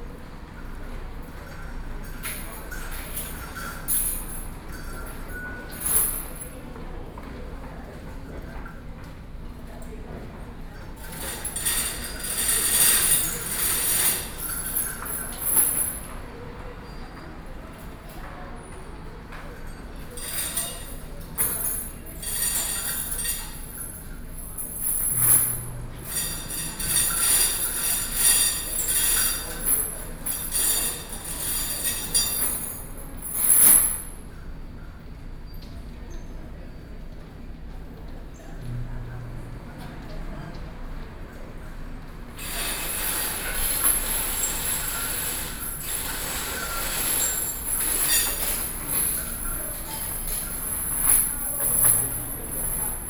Linkou Chang Gung Memorial Hospital, Guishan Dist. - in the Hospital
in the Hospital
Sony PCM D50+ Soundman OKM II